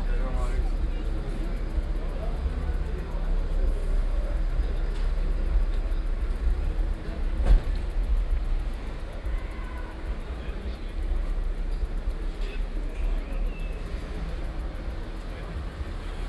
Keupstr., Köln Mülheim - street ambience, walk
short walk in Keupstr, i used to live here years ago, still visiting the same restaurant for its chicken soup.
(Sony PCM D50, OKM2)
Nordrhein-Westfalen, Deutschland, European Union, 18 July